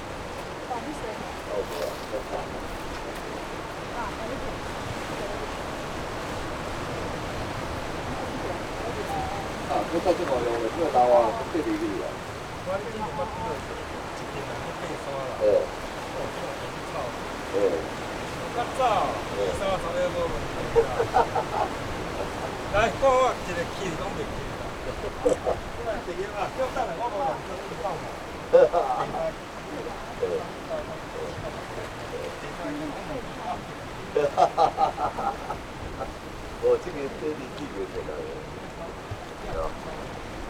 鐵堡, Nangan Township - On the rocky coast
Sound wave, On the rocky coast
Zoom H6 +Rode NT4